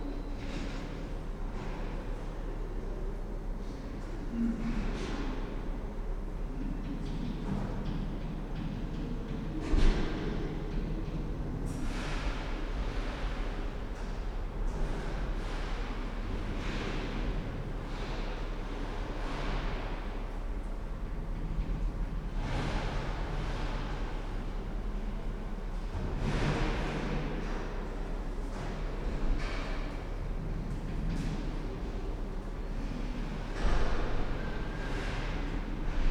Schwaighofen, Neu-Ulm, Deutschland - 2 floor of the HNU
A recording made while Students are on holiday at the university of applied sciences
14 December 2013, 00:41